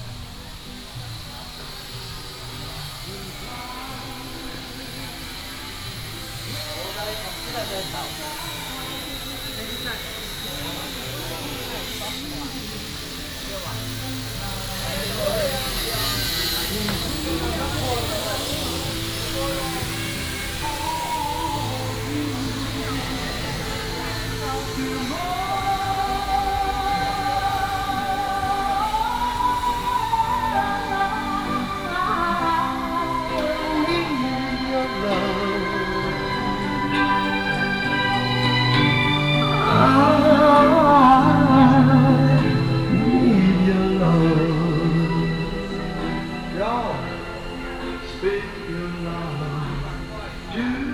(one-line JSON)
{"title": "大台中環保市場, Beitun Dist., Taichung City - Flea market", "date": "2017-03-22 09:26:00", "description": "Walking through the Flea market", "latitude": "24.16", "longitude": "120.70", "altitude": "112", "timezone": "Asia/Taipei"}